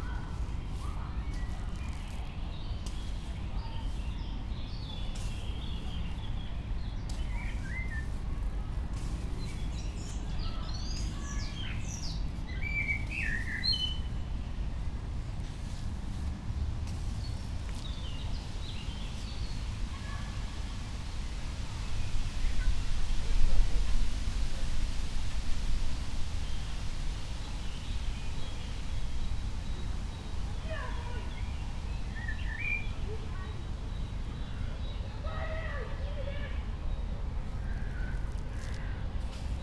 Mariborski otok, river Drava, tiny sand bay under old trees - wet dunes, low waters, train
slowly walking the dunes, train passes behind the river